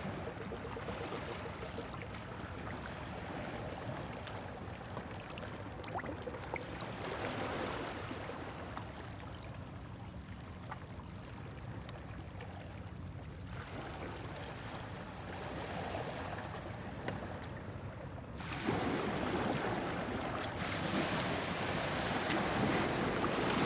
Saltdean, East Sussex, UK - Saltdean rockpool with tide coming in
Recording from a rockpool just as the tide was starting to come back in. Water was running down a channel between the rocks and with each wave bubbles of air escaped from under rocks and seaweed.